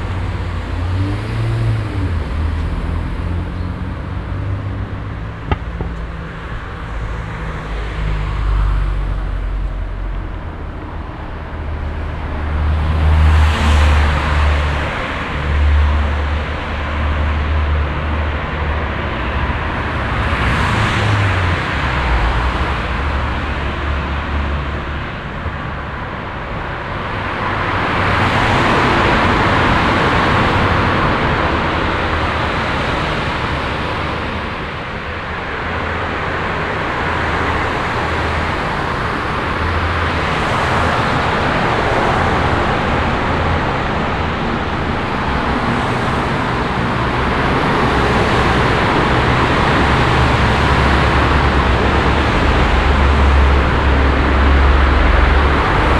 fahrzeugresonanzen in autotunnel unter gleisweg - hauptzufahrtsstrasse, mittags
soundmap nrw:
topographic field recordings, social ambiences
huelsenstrasse, tunnel